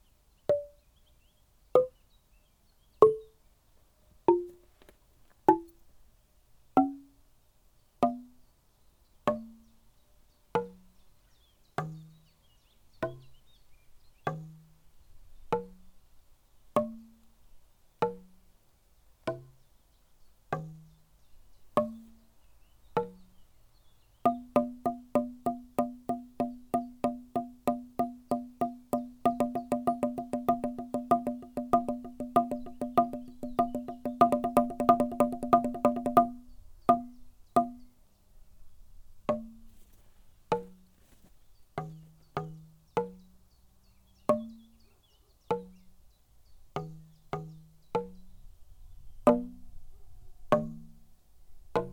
hoscheid, sound object, erdxylophon
At the Hoscheid Klangwanderweg - sentier sonore. A Sound object by Michael Bradke entitled Erdxylophon. The object consists of a wooen ground construction in the center of two benches. on top of the construction are tuned, wooden panels that can be played with two sticks. Its an outdoor instrument.
Hoscheid, Klangobjekt, Erdxylophon
Auf dem Klangwanderweg von Hoscheid. Ein Klangobjekt von Michael Bradke mit dem Titel Erdxylophon. Das Objekt besteht aus einer hölzernen Bodenkonstruktion in der Mitte von zwei Bänken. Auf der Konstruktion sind gestimmte hölzerne Elemente, die mit zwei Stöcken gespielt werden können.
Mehr Informationen über den Klangwanderweg von Hoscheid finden Sie unter:
Hoscheid, élément sonore, xylophone du sol
Sur le Sentier Sonore de Hoscheid. Un objet sonore intitulé le xylophone du sol créé par Michael Bradke.
Hoscheid, Luxembourg, 3 June, 18:51